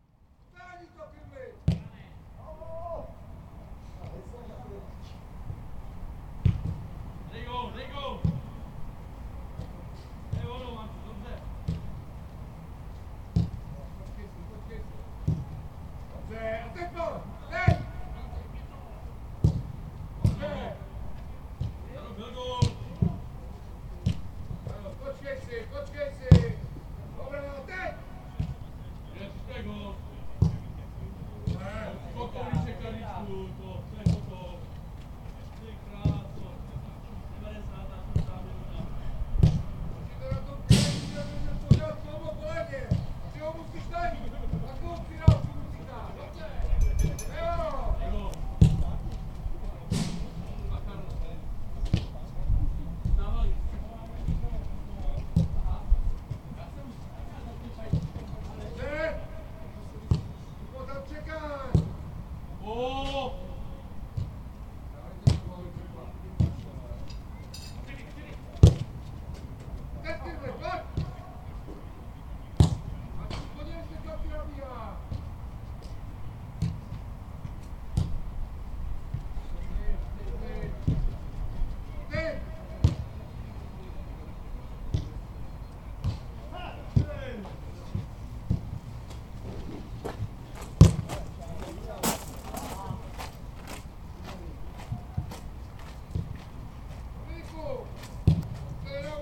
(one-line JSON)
{"title": "Lokomotiva Holešovice Football field. Praha, Česká republika - Training with coach", "date": "2013-07-18 13:07:00", "description": "Training of local football match.", "latitude": "50.11", "longitude": "14.45", "altitude": "192", "timezone": "Europe/Prague"}